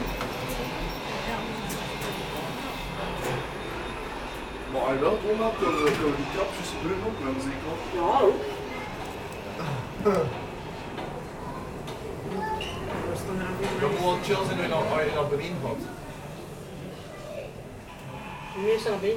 Le Tréport, France - Le Tréport funicular
Using the funicular located in the city called Le Tréport. We use here the top station. During this recording, people wait a few time, we embark in the funicular and after the travel, I record people waiting at the low station.
3 November 2017